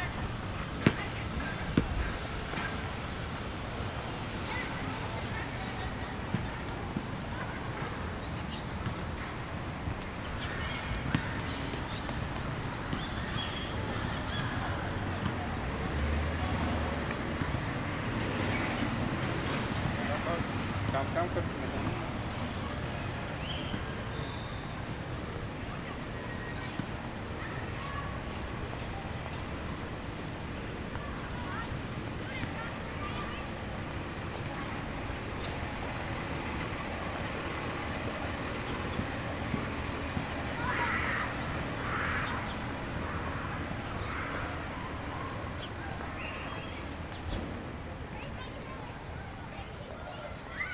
School playground, sounds of children playing basketball.
A student fakes an injury to avoid playing soccer with her classmates.
United States, 17 February, 10:10